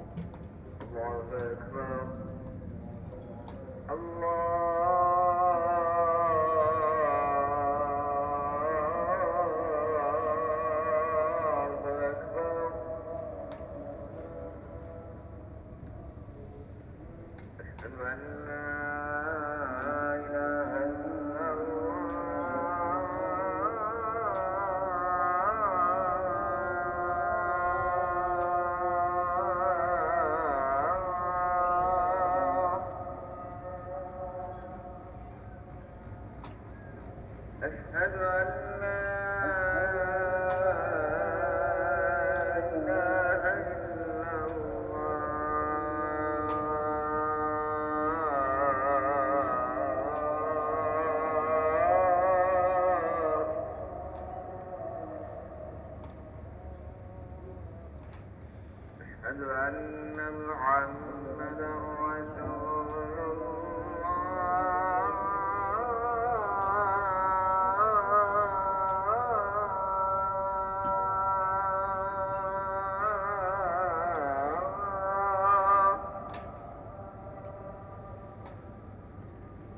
Marina Kalkan, Turkey - 915c Muezzin call to prayer (early morning)
Recording of an early morning call to prayer
AB stereo recording (17cm) made with Sennheiser MKH 8020 on Sound Devices MixPre-6 II.